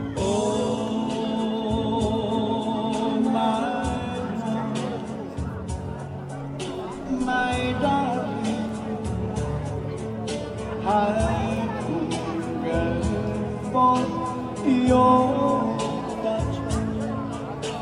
Piazza Ospedaletto, Massa MS, Italia - Dedicato al Borgo del Ponte
"Oh, my love, my darling
I've hungered for your touch
A long, lonely time
Time goes by so slowly
And time can do so much
Are you still mine?
I need your love"
Sulle note di Unchained Melody, come in un film di Scorsese, si apre la festa del quartiere, il 12 Agosto 2017
Massa MS, Italy, 14 August 2017, 20:12